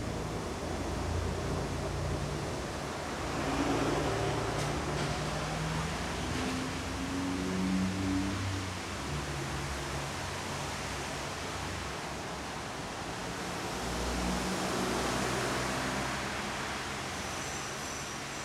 Sequence of a journey with 'Tramwaj 19' from Bytom to Katowice, past peri-urban brownfield sites and along humming traffic arteries of the Upper Silesian Industrial Region. The tram itself couldn't be more regional: a 'Konstal 105Na', manufactured from 1979 to 1992 in Chorzów's Konstal factories.
Recorded with binaural microphones.
Chorzów, Poland, 4 August 2014